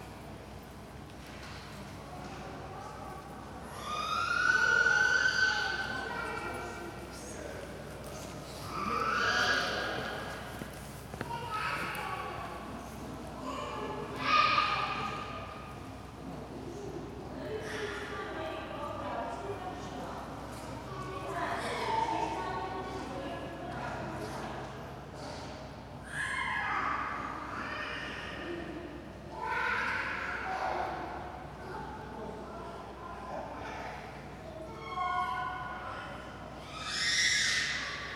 Miðbær, Reykjavik, Iceland - Playground in concert hall
Children running and screaming in corridor of Harpa concert hall